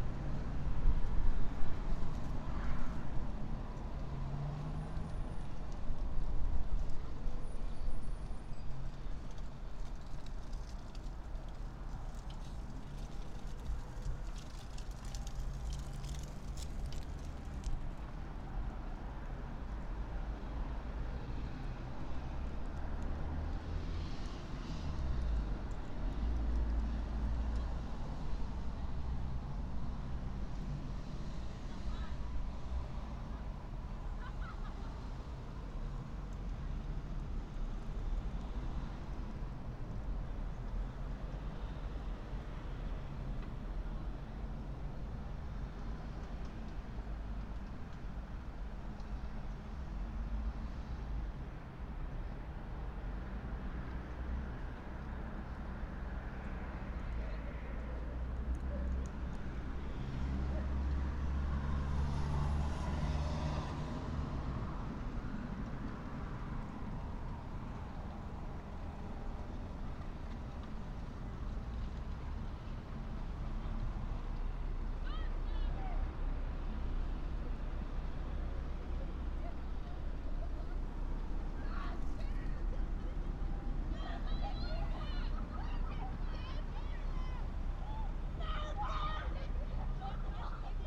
Rokiškis, Lithuania, evening cityscape
not so far from municipality building...policemen came asking me what I am going to do....
Panevėžio apskritis, Lietuva, 17 February 2020, 17:55